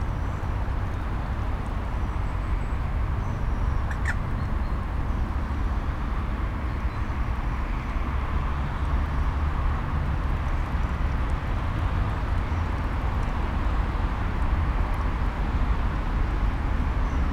The Car Park Mere, Mere Ln, Scarborough, United Kingdom - The Mere ... daylight breaks ...
The Mere ... daylight breaks ... groups of canada and greylag geese take to the air ... bird calls and wing beats also from ... mute swan ... moorhen ... mallard ... grey heron ... black-headed gull ... blackbird ... magpie ... crow ... mandarin duck ... wren ... redwing ... chaffinch ... dunnock ... wood pigeon ... domesticated goose ... lavaliers clipped to sandwich box ... plenty of noise from the morning commute ...
13 December 2018